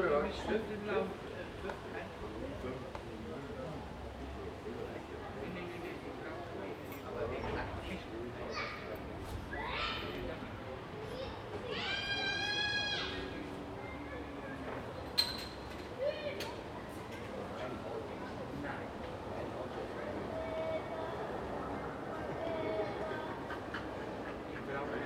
Soldiner Straße, Soldiner Kiez, Wedding, Berlin, Deutschland - Soldiner Straße 14, Berlin - A Sunday afternoon at the cornershop
Soldiner Straße 14, Berlin - A Sunday afternoon at the cornershop.
[I used the Hi-MD-recorder Sony MZ-NH900 with external microphone Beyerdynamic MCE 82]
Soldiner Straße 14, Berlin - Ein Sonntagnachmittag vor dem Späti.
[Aufgenommen mit Hi-MD-recorder Sony MZ-NH900 und externem Mikrophon Beyerdynamic MCE 82]